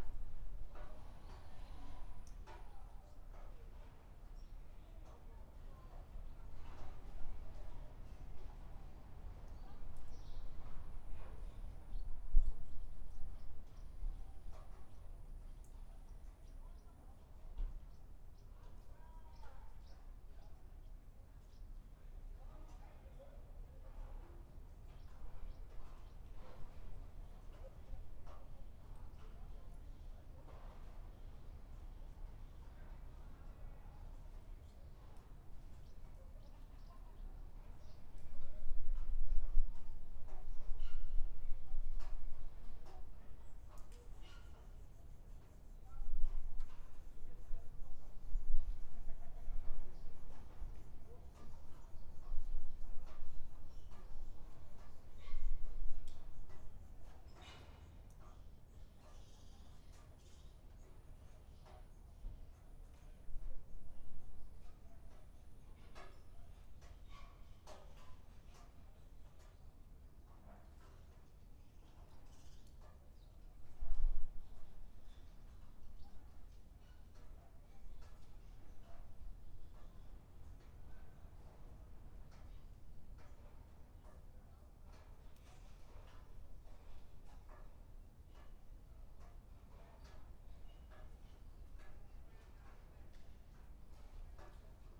Buzludzha, Bulgaria, inside - Buzludzha, Bulgaria
Inside the monument of Buzludzha, a ruin of socialist architecture, the roof is incomplete, a lot of rubble lay around, swallows made their nests... the recording is rather quiet, the microphones stood on a remote place since the wind was quite heavy in this building on this peak of a mountain
Стара Загора, Бългaрия, 16 July 2019, ~13:00